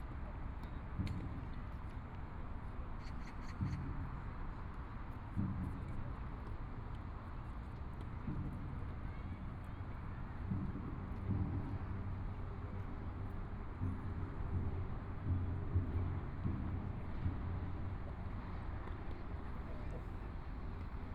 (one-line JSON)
{"title": "大佳河濱公園, Taipei City - in the Park", "date": "2014-02-16 16:39:00", "description": "sitting in the Park, Pedestrian, Traffic Sound, A lot of people riding bicycles through, Birds singing\nDistant drums, Tennis Sound\nBinaural recordings, ( Proposal to turn up the volume )\nZoom H4n+ Soundman OKM II", "latitude": "25.08", "longitude": "121.53", "timezone": "Asia/Taipei"}